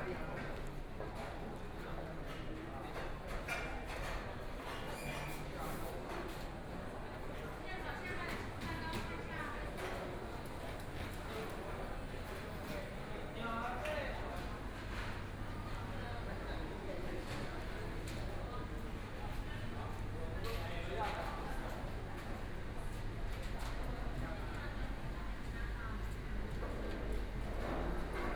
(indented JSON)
{
  "title": "Nanjin Road, Shanghai - inside the department store",
  "date": "2013-11-25 16:18:00",
  "description": "The crowd, Walking inside the department store, Footsteps, Traffic Sound, Binaural recording, Zoom H6+ Soundman OKM II",
  "latitude": "31.24",
  "longitude": "121.48",
  "altitude": "9",
  "timezone": "Asia/Shanghai"
}